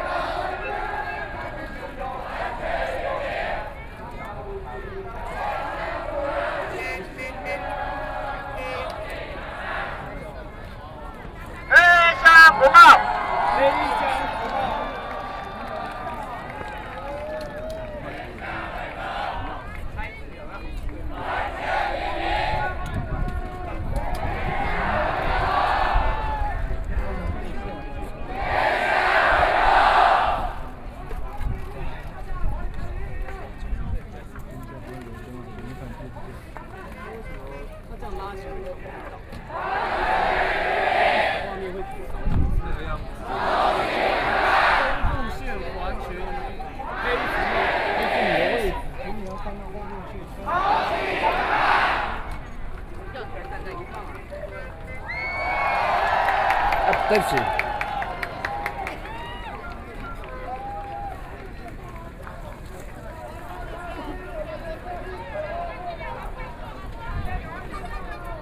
National Chiang Kai-shek Memorial Hall - Protest event
Protesters gathered in front of the ladder, Collective shouting and singing, Binaural recordings, Sony PCM D50 + Soundman OKM II
Zhongzheng District, 林森南路地下道